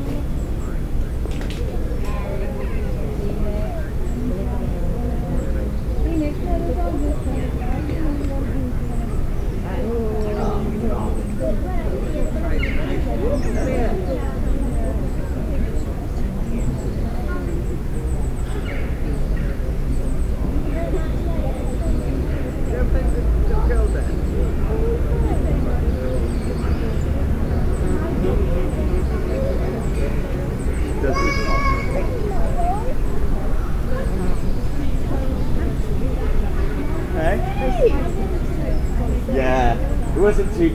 Priory Park, Malvern, Worcestershire, UK - Priory Park
Experimental ambient of a public park. By laying the microphones on the ground under the bench I was using sound from quite a distance seems to have been picked up. Recorded with a Sound devices Mix Pre 3 and 2 Beyer lavaliers.